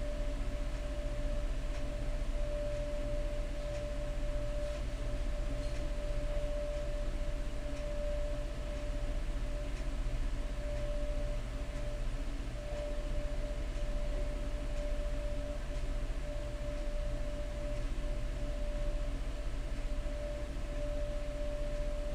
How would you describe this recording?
Equipment: Marantz PMD661 and a stereo pair of DPA 4060s.